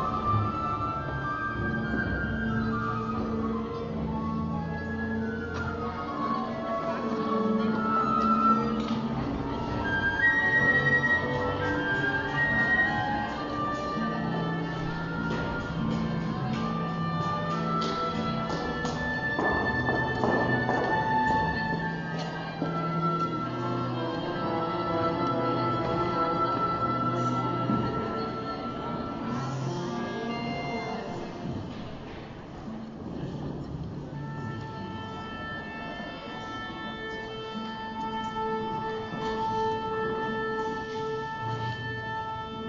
Ein studentisches Orchesters stimmt seine Instrumente. Es klingt.
Berlin, Deutschland, European Union